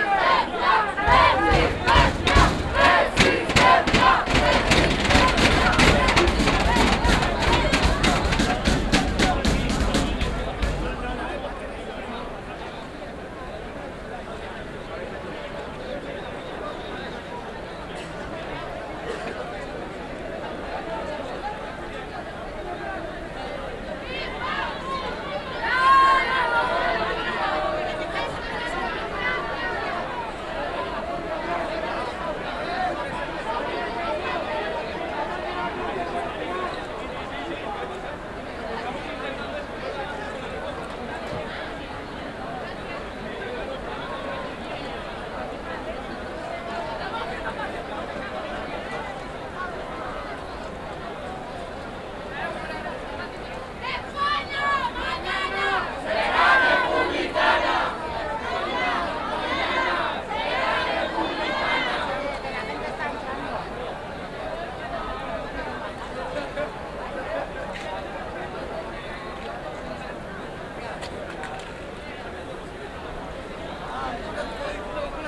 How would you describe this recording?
2014-06-02. Manifestation to celebrate the Abdication of King Juan Carlos. A group of youths block the gate of the metro station in order to keep it open for more activists.